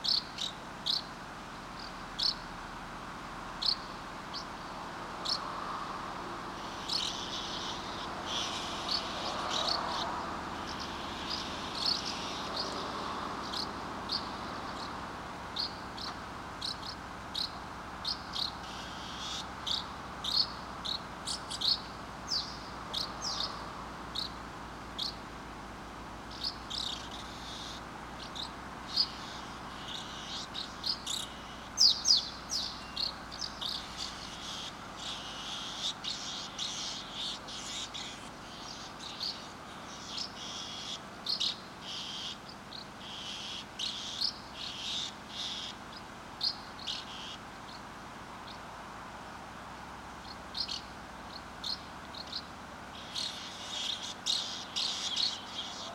Tech Note : Sony PCM-M10 internal microphones.